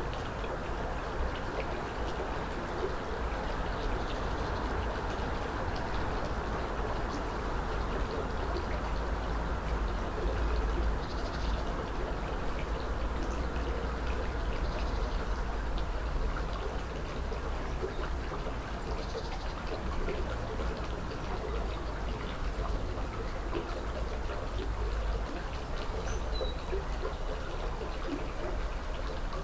Ein Tag an meinem Fenster - 2020-03-26